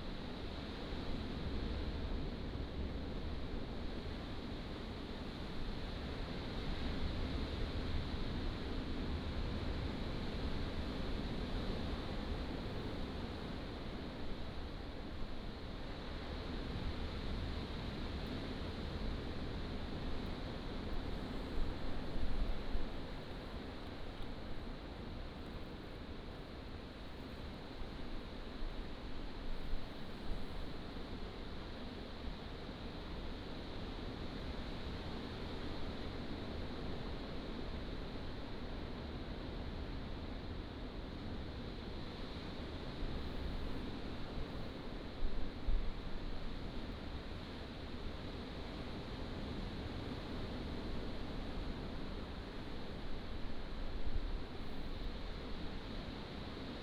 Lüdao Township, Taitung County - Inside the cave
Inside the cave, Sound of the waves